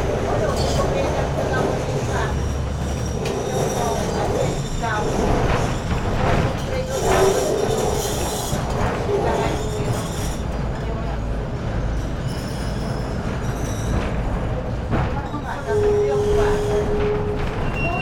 lisbon, calcada do lavra - cable car
people waiting for departure. almost 100y old tram climbs up this very steeply part of the street.